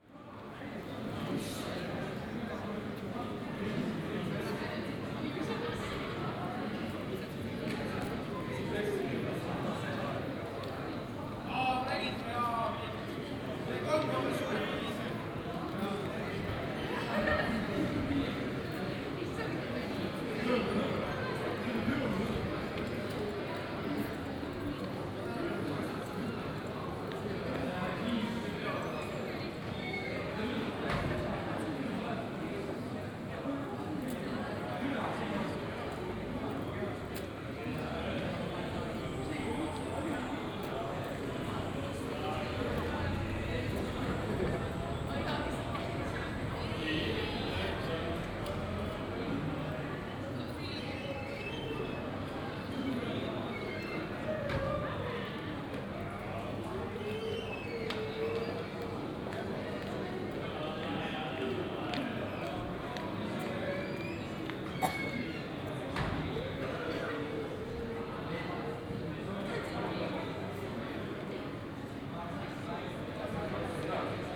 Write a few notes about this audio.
weekend, people gathering at a place in the old town